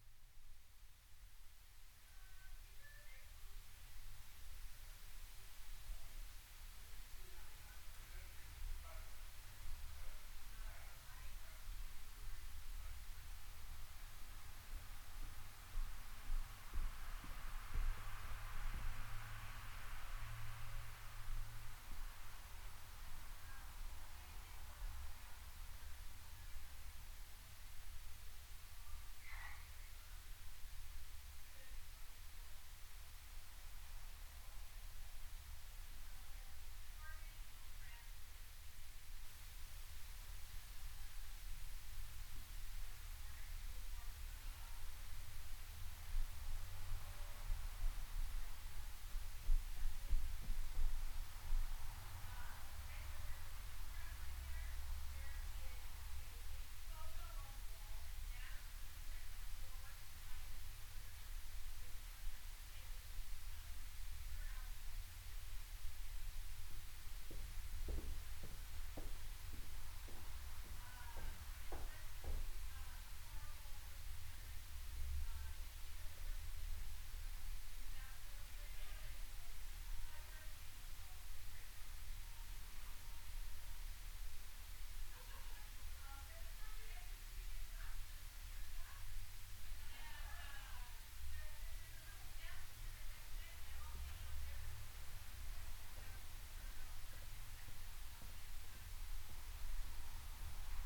Ave. (Pioneer Building), Seattle, WA, USA - Between Stories (Underground Tour 5)
Amidst old elevator parts, within the lower level of the Pioneer Building. Footsteps, eventually skateboard, heard from ceiling/sidewalk above. "Bill Speidel's Underground Tour" with tour guide Patti A. Stereo mic (Audio-Technica, AT-822), recorded via Sony MD (MZ-NF810).
12 November 2014